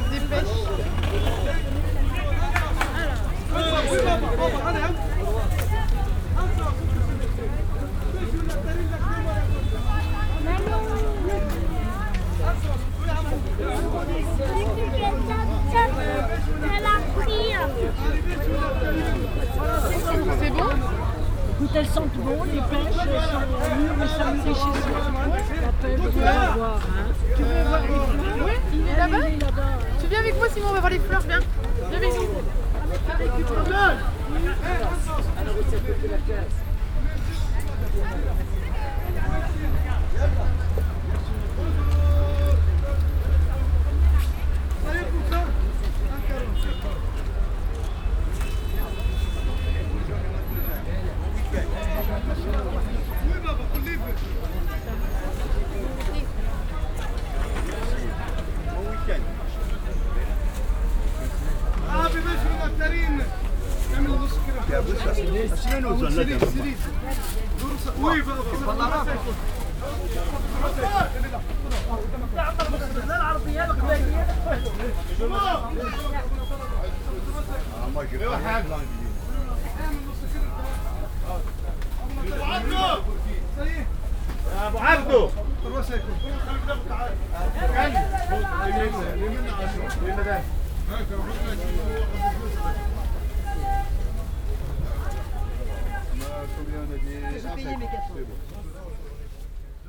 Paris, Marché Richard Lenoir, Market ambience
Marché Richard Lenoir. General ambience.
Paris, France, May 2011